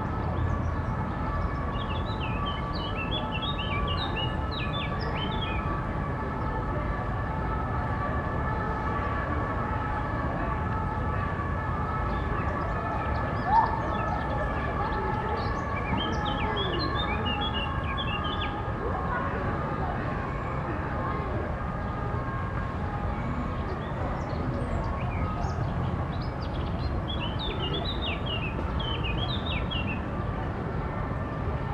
Swimming pools, Birds, Water, car trafic
captation : Zoom h4n
France métropolitaine, France, 2 July